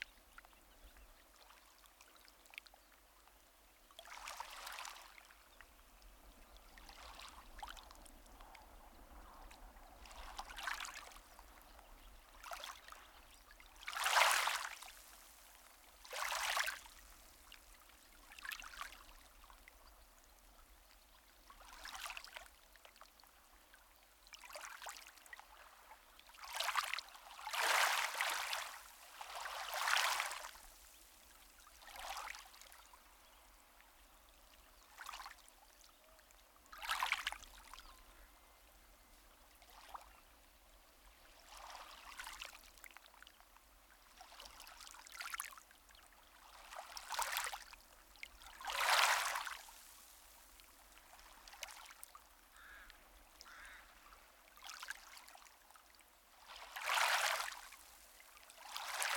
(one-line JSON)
{"title": "Newton Stewart, UK - Portyerrock Bay, near Isle of Whithorn, 24 August 2021", "date": "2021-08-24 14:00:00", "description": "It was a very sunny and warm day when I recorded this, and I actually got sunburn from sitting out for too long. This section of coast is very sheltered, and there isn't much of a beach so the waves, such as they are, just lap against the shore. It's very peaceful, and traffic using the road in this area is minimal. The area is surrounded by farm land, and towards the end of the track you can hear a sort of breathing and rustling sound from the left side. This is a cow that had wandered over to say hello, and started eating the grass nearby. Recorded 24 August 2021, using the Sony PCM D100 and Audio Technica AT8022 stereo microphone.", "latitude": "54.72", "longitude": "-4.36", "altitude": "8", "timezone": "Europe/London"}